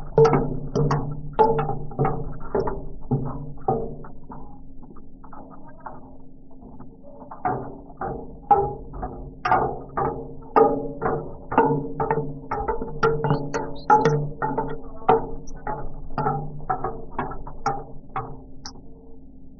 112台灣台北市北投區學園路1號國立臺北藝術大學圖書館 - the sound around the pond
footsteps going down stairs